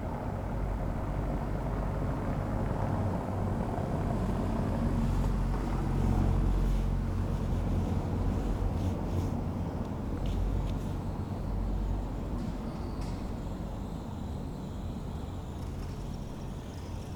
Berlin: Vermessungspunkt Friedel- / Pflügerstraße - Klangvermessung Kreuzkölln ::: 24.09.2010 ::: 01:09
Berlin, Germany, 24 September 2010